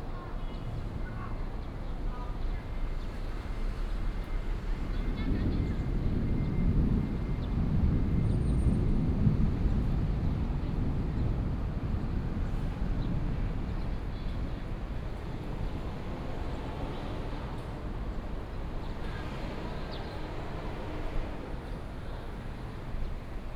Bitan, Xindian District, New Taipei City - Thunder
Sitting on the embankment side, Viaduct below, Thunder
New Taipei City, Taiwan, 28 July 2015